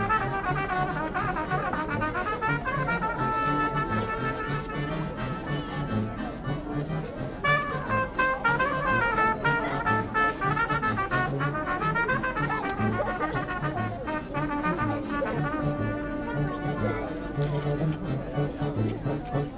{"title": "berlin, maybachufer: speakers corner neukölln - the city, the country & me: balkan brass band", "date": "2011-04-15 16:17:00", "description": "another balkan brass band\nthe city, the country & me: april 15, 2011", "latitude": "52.49", "longitude": "13.43", "altitude": "42", "timezone": "Europe/Berlin"}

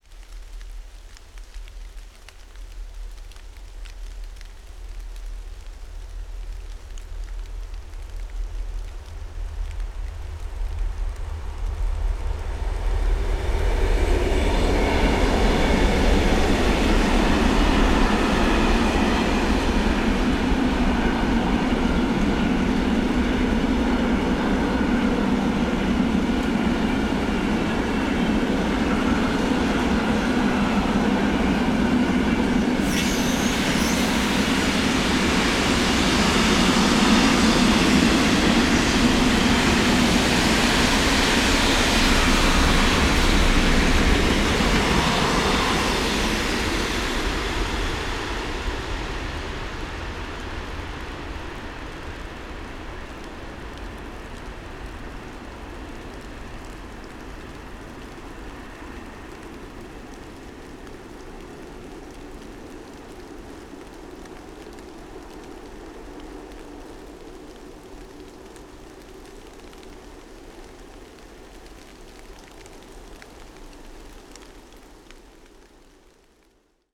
{"title": "Rue d'Aix, Plombières, Belgique - Plombières, BEL, freight train braking", "date": "2007-10-21 16:22:00", "description": "Freight train driving downhill from Aachen towards Montzen, applying brakes. Rain in the background.", "latitude": "50.73", "longitude": "6.01", "altitude": "243", "timezone": "Europe/Brussels"}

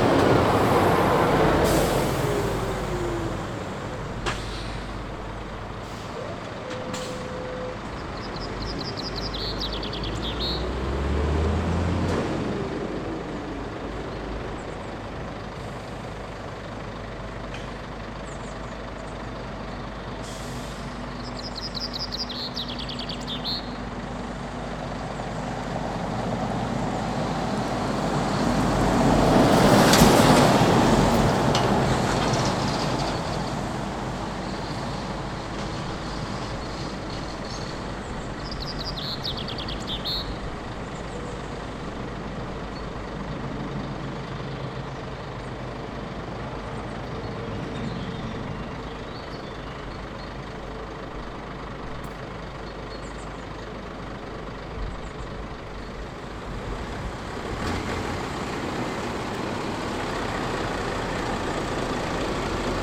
{"title": "Hoog Buurloo, Apeldoorn, Nederland - Motorway Service Area 'Lucasgat’", "date": "2014-05-21 14:17:00", "description": "Recording made while resting at the ‘Motorway Service Area Lucasgat’ on the A1 highway from Amersfoort to Apeldoorn. I placed my Zoom recorder for short time on the roof of my car. Slightly windy.", "latitude": "52.19", "longitude": "5.83", "altitude": "65", "timezone": "Europe/Amsterdam"}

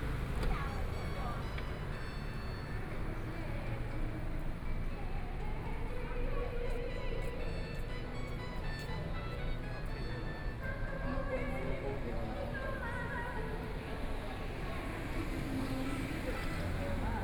{"title": "Dayong Rd., Yancheng Dist. - in the Plaza", "date": "2014-05-13 20:25:00", "description": "in the Plaza, Traffic Sound", "latitude": "22.62", "longitude": "120.28", "altitude": "11", "timezone": "Asia/Taipei"}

